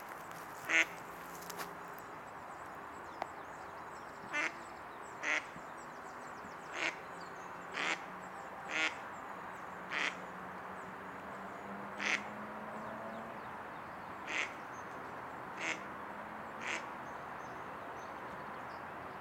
Variante à, Almada, Portugal - Garden ambience, Almada
Garden ambience @ Barreiro. Recorded with Zoom H6 XY stereo mic.
14 April 2017